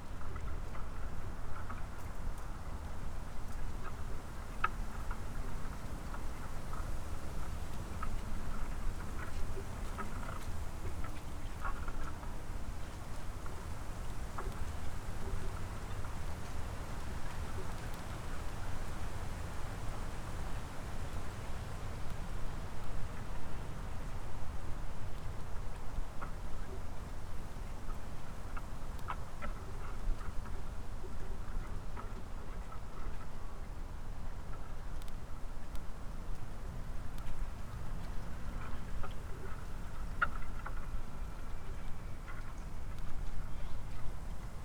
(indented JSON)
{
  "title": "바람이 나오는 날 Gusty day in Chuncheon",
  "date": "2020-05-05 11:30:00",
  "description": "바람이 나오는 날_Gusty day in Chuncheon",
  "latitude": "37.85",
  "longitude": "127.75",
  "altitude": "125",
  "timezone": "Asia/Seoul"
}